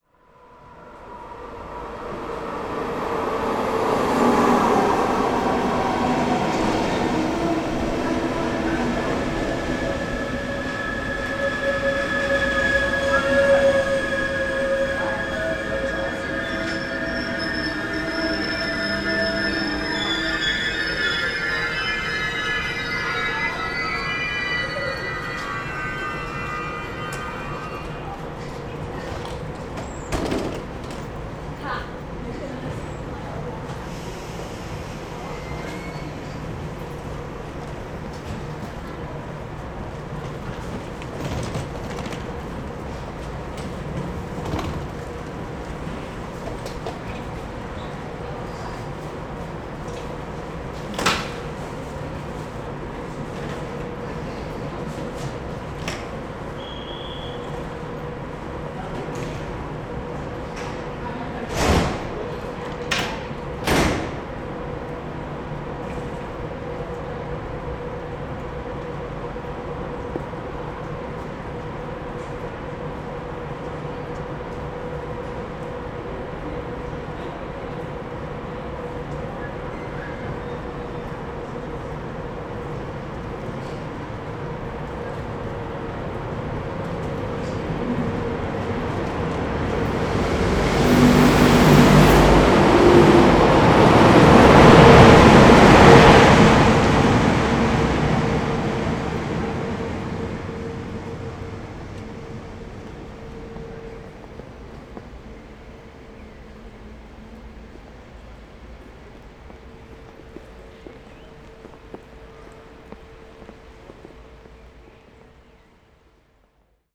A departing and leaving train at the train station
Schwäbisch Gmünd, Germany, May 2014